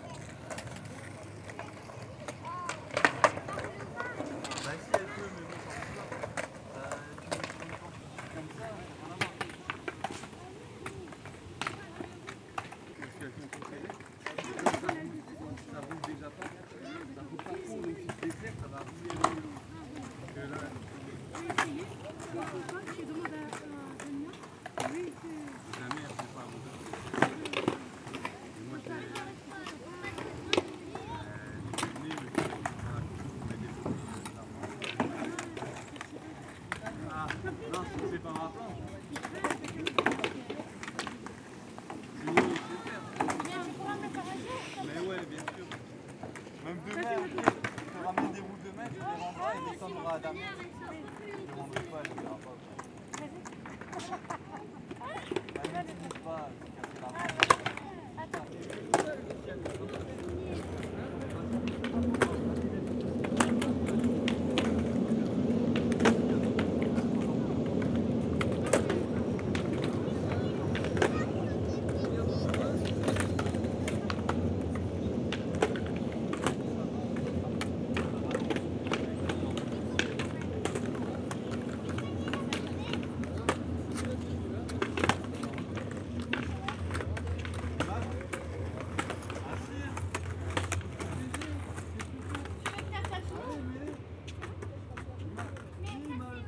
Brussel, Belgium - Skatepark
On the Brussels skatepark, young girls playing skateboards. On the beginning, a mother taking care to her children. After a class is beginning. During this recording, a junkie asked me for drugs !